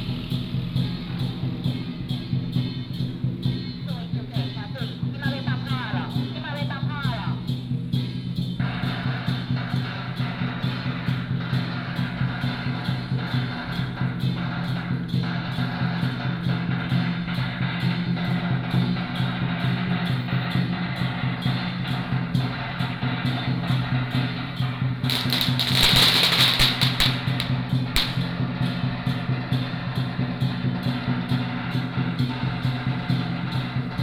鹿港天后宮, 彰化縣 - In the square
In the square of the temple, True and false firecrackers sound
Lukang Township, Changhua County, Taiwan, 15 February 2017